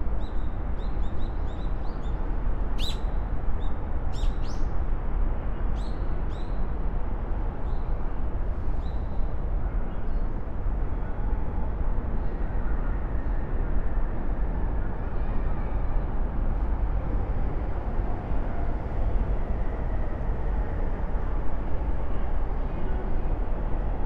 kyu shiba-rikyu gardens, tokyo - gardens sonority
Tokyo, Japan